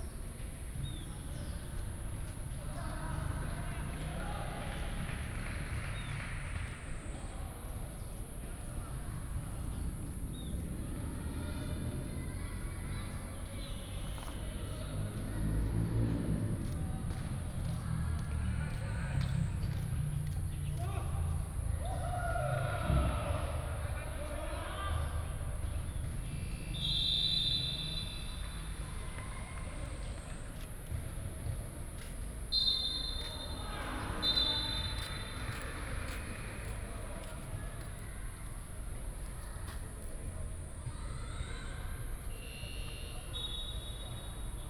Liuhe Rd., Puli Township, Nantou County - Next to the basketball court
bus station, In the station hall